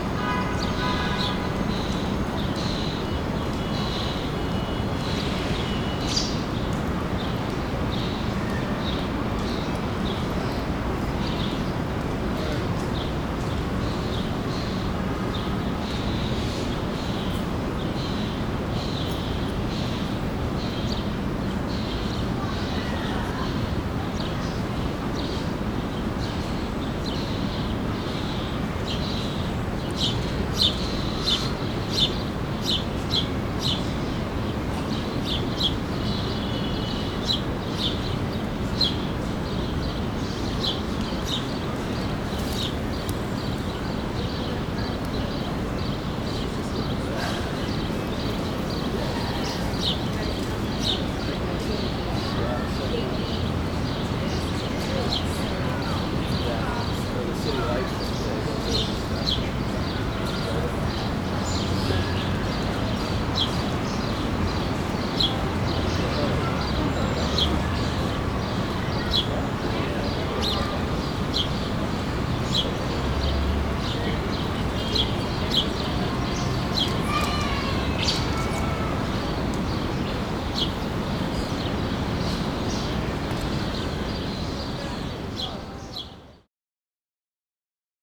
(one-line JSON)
{"title": "Unnamed Road, New York, NY, USA - Saturday afternoon at Central Park", "date": "2019-07-06 15:30:00", "description": "Central Park, bird sounds and traffic.", "latitude": "40.78", "longitude": "-73.97", "altitude": "34", "timezone": "America/New_York"}